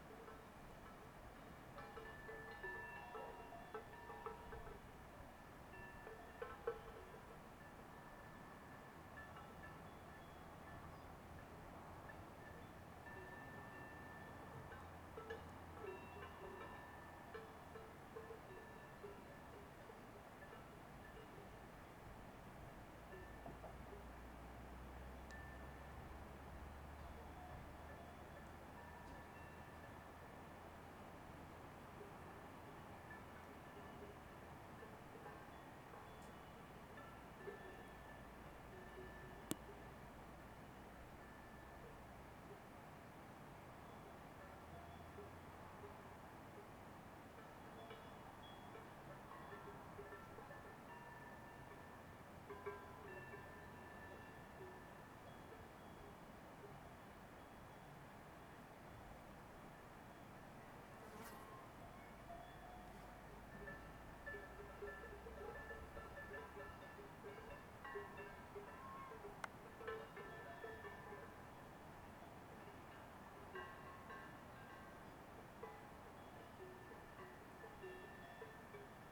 {
  "title": "Via Maestra, Rorà TO, Italia - Stone Oven House August 29&30 2020 artistic event 3 of 3",
  "date": "2020-08-30 09:00:00",
  "description": "Music and contemporary arts at Stone Oven House, Rorà, Italy, Set 3 of 3\nOne little show. Two big artists: Alessandro Sciaraffa and Daniele Galliano. 29 August.\nSet 3 of 3: Saturday, August 30th, h.9:00 a.m.",
  "latitude": "44.79",
  "longitude": "7.20",
  "altitude": "893",
  "timezone": "Europe/Rome"
}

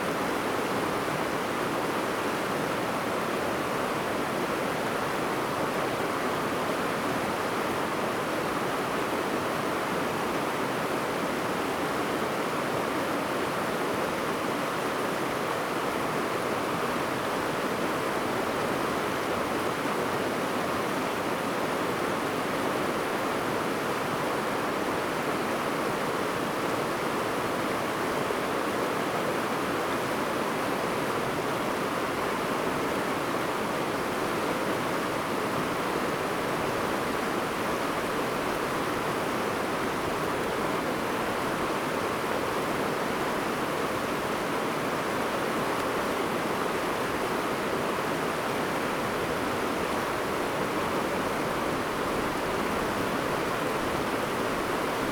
{
  "title": "太麻里溪, 金峰鄉拉冷冷部落 - In the river",
  "date": "2018-04-03 15:59:00",
  "description": "In the river, Stream sound\nZoom H2n MS+XY",
  "latitude": "22.59",
  "longitude": "120.96",
  "altitude": "78",
  "timezone": "Asia/Taipei"
}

